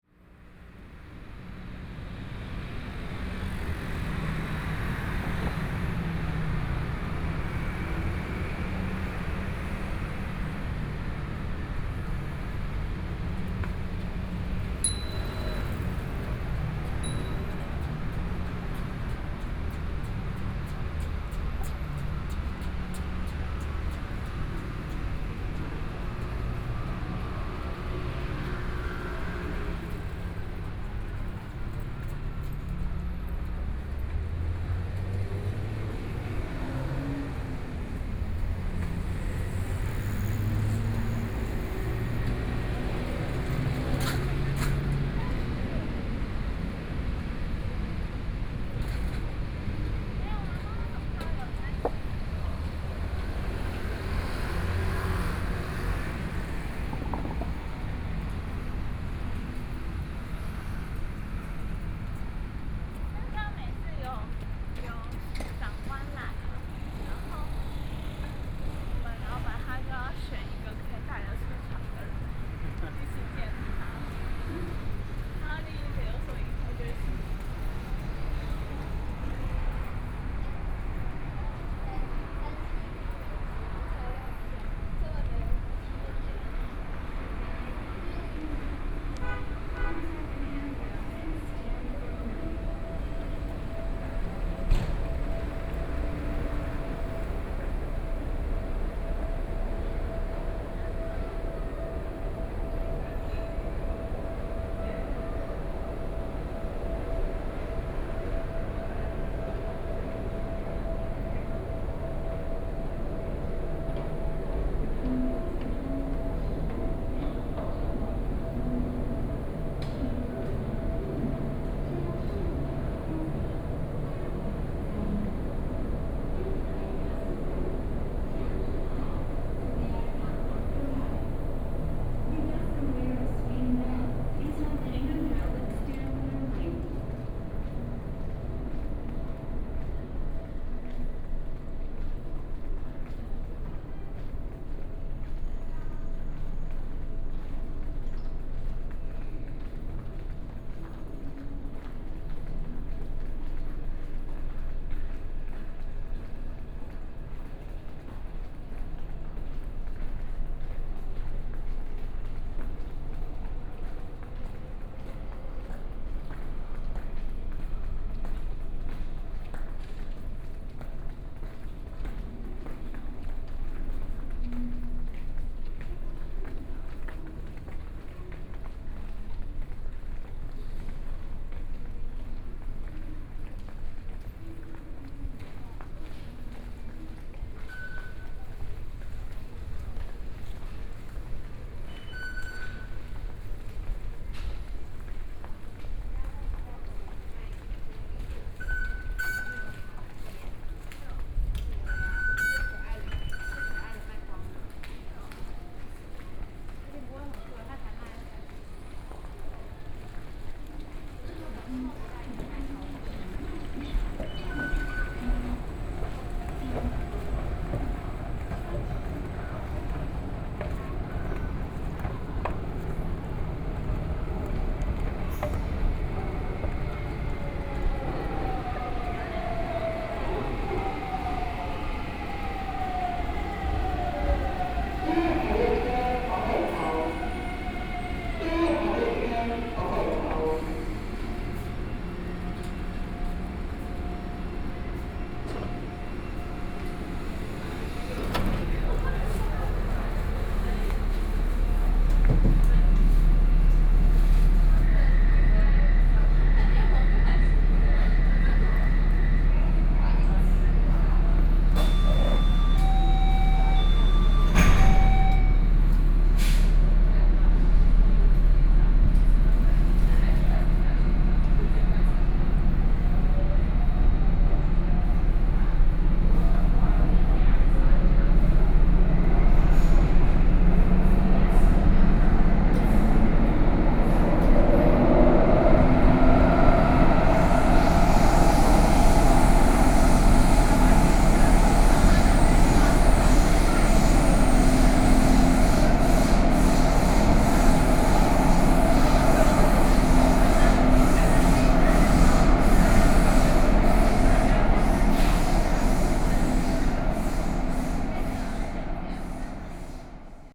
Chiang Kai-Shek Memorial Hall Station - soundwalk
The road from the ground, Then go into the MRT station, Sony PCM D50 + Soundman OKM II
Taipei City, Taiwan, 6 September